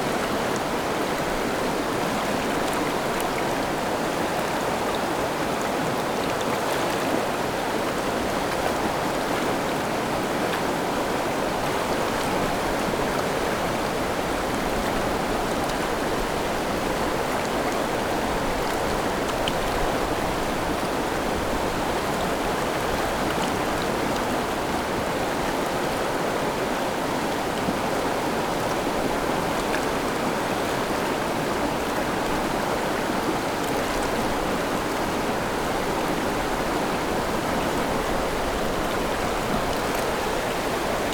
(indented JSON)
{
  "title": "蘭陽溪, 員山鄉中華村 - Stream after Typhoon",
  "date": "2014-07-25 14:10:00",
  "description": "Stream after Typhoon, Traffic Sound\nZoom H6 MS+ Rode NT4",
  "latitude": "24.70",
  "longitude": "121.65",
  "altitude": "82",
  "timezone": "Asia/Taipei"
}